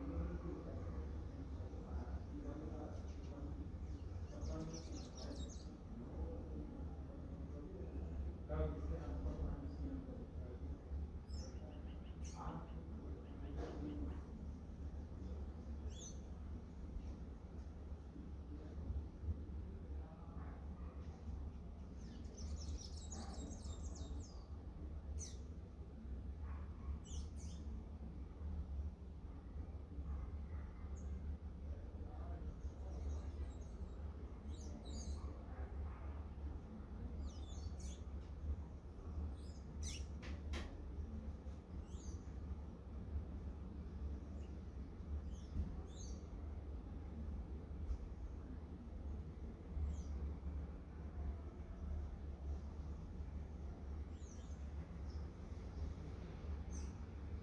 Cra., Medellín, Antioquia, Colombia - Ambiente barrio
Noviembre 9. El Poblado, Transversal 2
6.201793, -75.563104
5:40 am
Coordenadas:
Barrio el poblado, transversal 2
Descripción: Sonido en la madrugada en un barrio en el poblado.
Sonido tónico: Ambiente y sonido atmosfera del lugar.
Señal sonora: Personas que se escuchan hablando a veces en el fondo.
Técnica: Micrófono Estéreo con el celular.
Tiempo: 2:29 minutos
Integrantes:
Juan José González
Isabel Mendoza Van-Arcken
Stiven López Villa
Manuela Chaverra